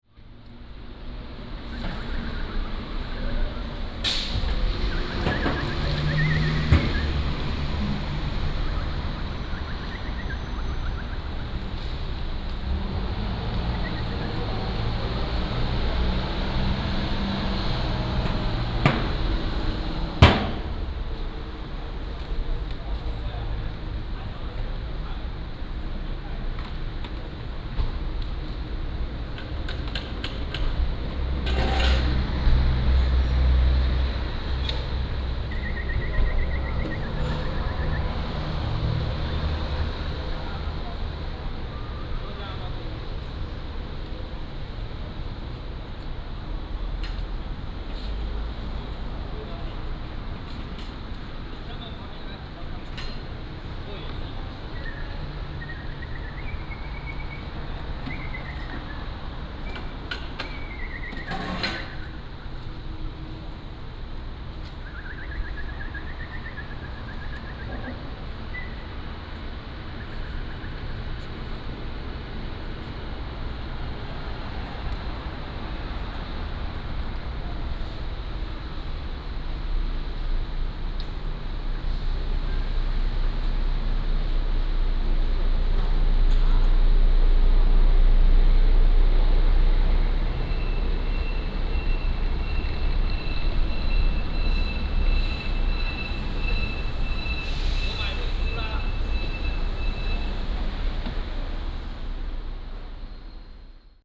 At the airport, An old cleaning staff, Whistling, Traffic Sound